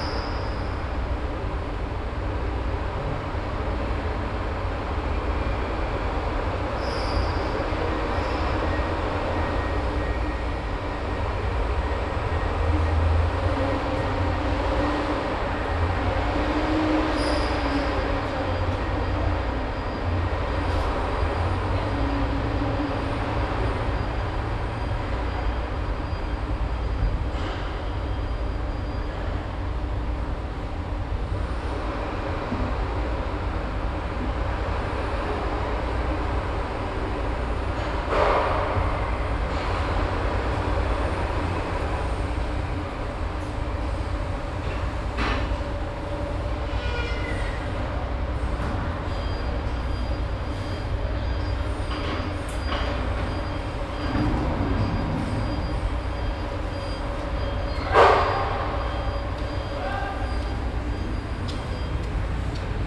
Kirkwall, Orkney Islands, UK - Stairs To Cargo Deck, Lerwick to Aberdeen Ferry, Kirkwall Stopover
Sailing from the Shetland Islands to Aberdeen, the ferry stops at Kirkwall on the Orkneys in the middle of the night. Standing at the top of the stairs down to the cargo deck.
Soundman OKMII/ Olympus LS11